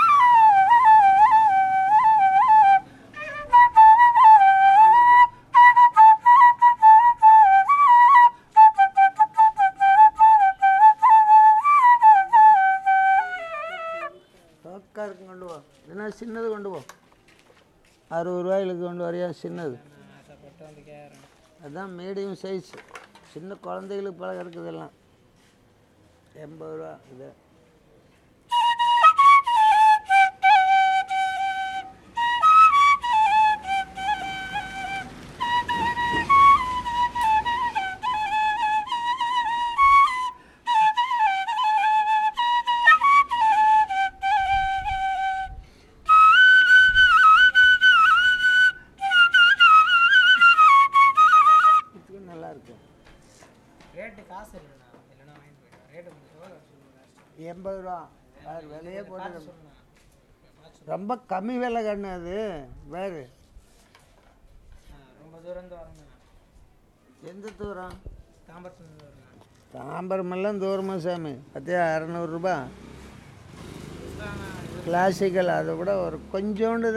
Fisherman Colony, Mahabalipuram, Tamil Nadu, Inde - Mamallapuram - Le vendeur de flûtes
Mamallapuram - Le vendeur de flûtes
Chengalpattu District, Tamil Nadu, India, 22 June 2008